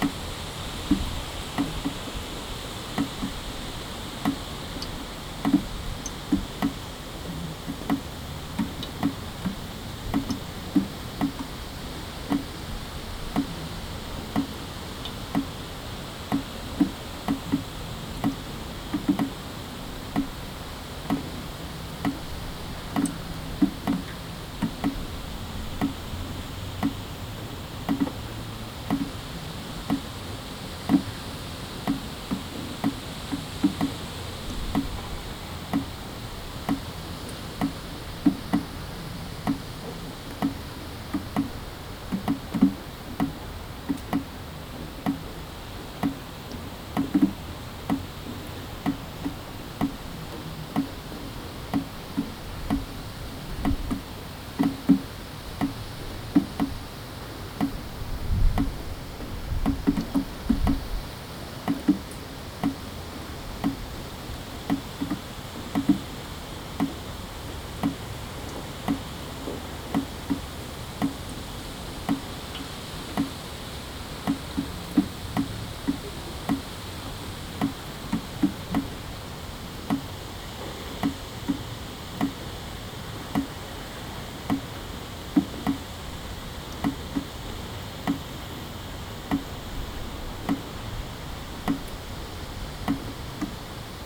Ares, A Coruña, Spain - Rain25082015LCG
Recorded from an attic window using a Zoom H2n.